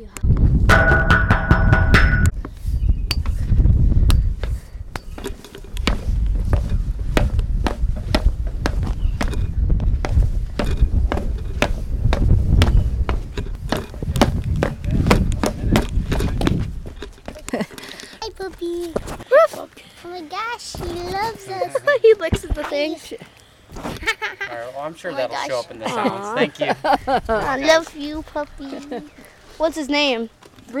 Firetower, Ouabache State Park, Bluffton, IN, USA - Climbing the fire tower and petting a dog (sound recording by Tyler Boggs)
Sound recording by Tyler Boggs. Climbing up the fire tower at Ouabache State Park, Bluffton, IN. Recorded at an Arts in the Parks Soundscape workshop at Ouabache State Park, Bluffton, IN. Sponsored by the Indiana Arts Commission and the Indiana Department of Natural Resources.